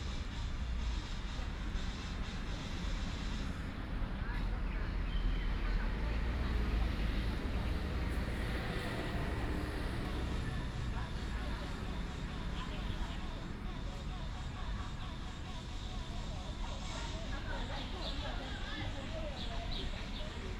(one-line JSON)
{"title": "赤土崎新村, Hsinchu City - Morning in the park", "date": "2017-09-21 06:34:00", "description": "Used to be a military residential area, It is now green park, Birds call, Healthy gymnastics, Binaural recordings, Sony PCM D100+ Soundman OKM II", "latitude": "24.80", "longitude": "120.99", "altitude": "41", "timezone": "Asia/Taipei"}